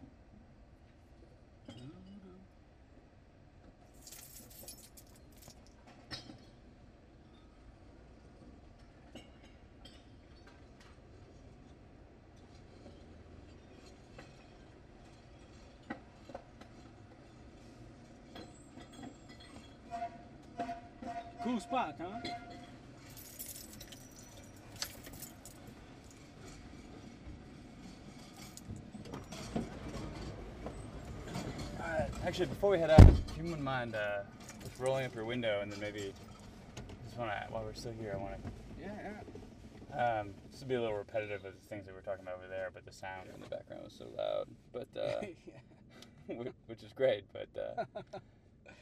Northwest Berkeley, Berkeley, CA, USA - transfer station West Berkeley
being interviewed by Sam Harnett for KQED program / California report / about field recording and Aporee while recycling beer bottles .. $6.57 received